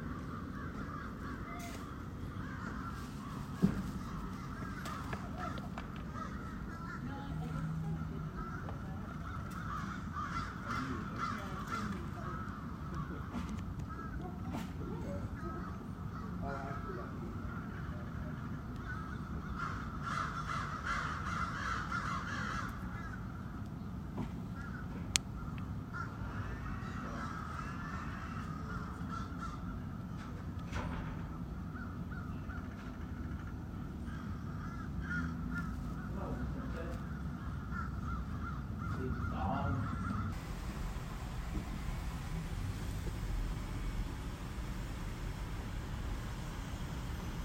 Summit Street, Trinity College - Main Quad: Crows
Recording of crows, they come out to the main quad routinely around 4pm.